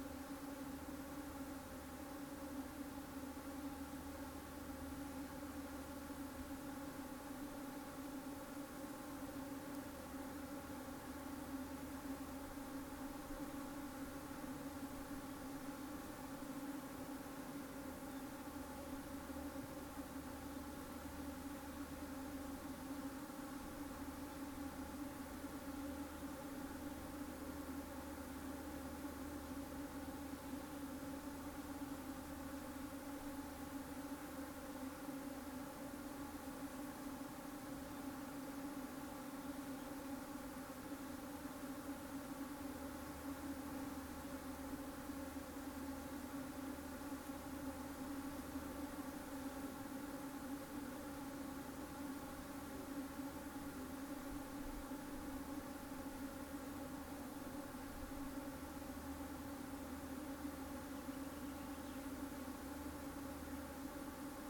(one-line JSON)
{"title": "Unnamed Road, Sfakia, Greece - bees hum in the forest", "date": "2018-04-05 14:42:00", "description": "Springtime flowering in mountainside pine trees forest over the sea at midday. Bees and other insects blend in to make a wonderful background sound.", "latitude": "35.22", "longitude": "24.01", "altitude": "80", "timezone": "Europe/Athens"}